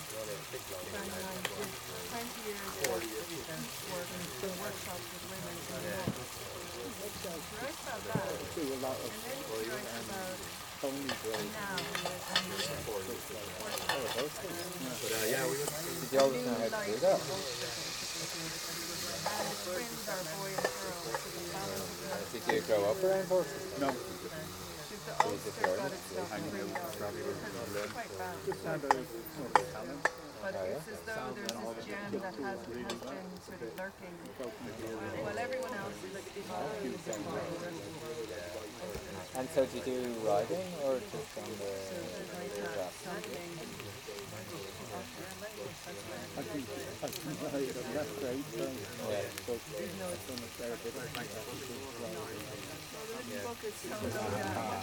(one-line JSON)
{"title": "Music by the Fire, The Octagon, The Glen of the Downs Nature Reserve, County Wicklow, Ireland - Sizzling on the fire", "date": "2017-07-29 23:12:00", "description": "Meat sizzling on the fire, chatter around the fire.", "latitude": "53.14", "longitude": "-6.12", "altitude": "205", "timezone": "Europe/Dublin"}